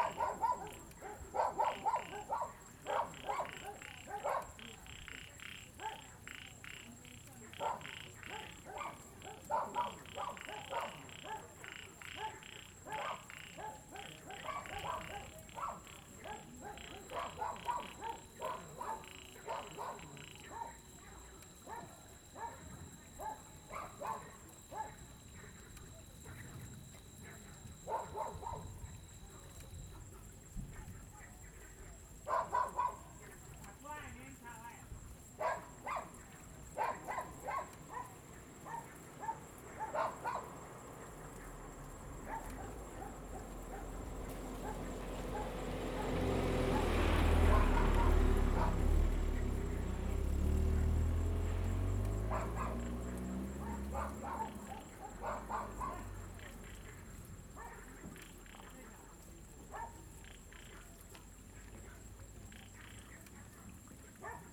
都蘭村, Donghe Township - Frogs and Dogs
Thunder, Frogs sound, Dogs barking, Mountain road at night
Zoom H2n MS+XY
Taitung County, Taiwan, 6 September 2014, ~18:00